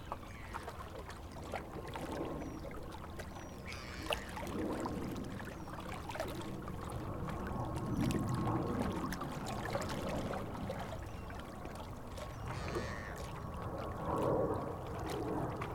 Petit Port, Aix-les-Bains, France - Charters des neiges
Près du lac du Bourget au bout d'une digue du Petit Port d'Aix-les-Bains les clapotis de l'eau dans les rochers, passages d'avions venant de l'aéroport de Chambéry. C'est l'hiver les touristes viennent skier en Savoie.